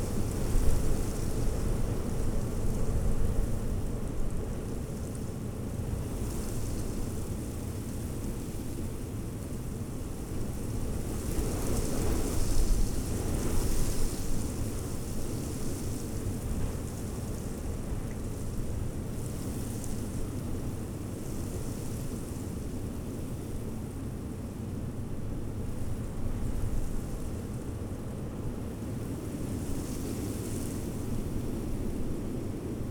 lancken-granitz: neuensiener see - the city, the country & me: reed stirred by the wind
dry reed stirred by the wind during storm
the city, the country & me: march 7, 2013
Lancken-Granitz, Germany, 7 March 2013, ~18:00